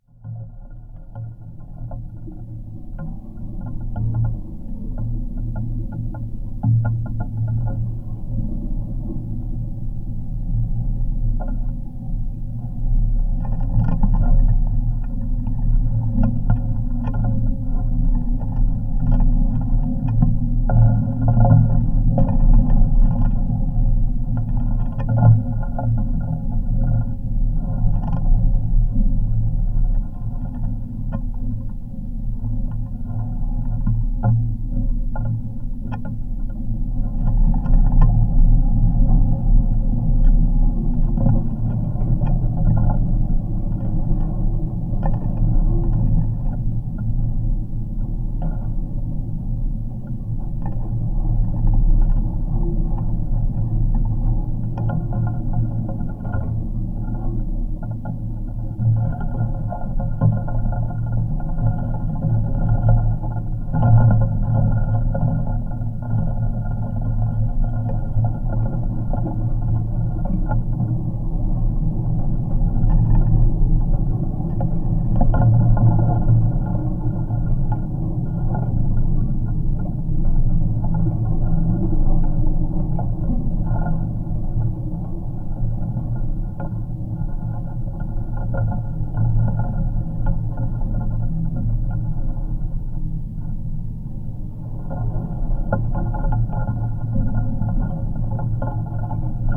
Šiaudiniai, Lithuania, watertower
another watertower in my collection. hopefully this will remain for longer times than metallic ones. this is bricks built, has some antennas on the top. the sounds captured are from metallic parts of the tower: ladder and pipes. geophone recording.